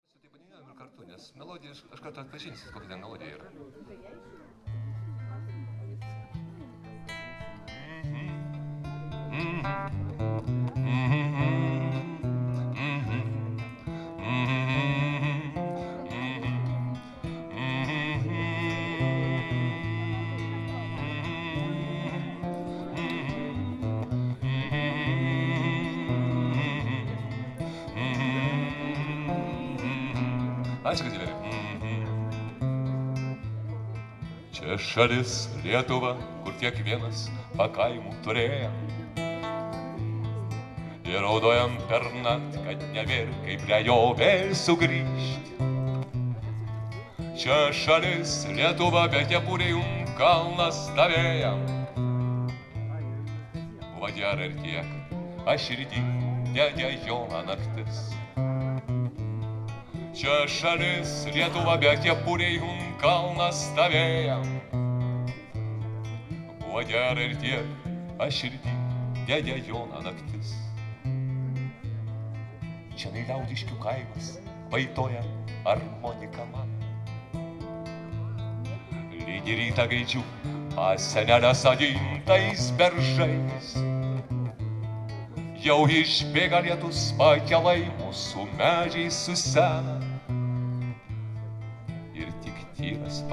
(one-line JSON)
{"title": "Lithuania, Pagulbis, evening music", "date": "2011-06-11 19:50:00", "description": "lithuanian bard Algis Svidinskas", "latitude": "55.39", "longitude": "25.22", "altitude": "132", "timezone": "Europe/Vilnius"}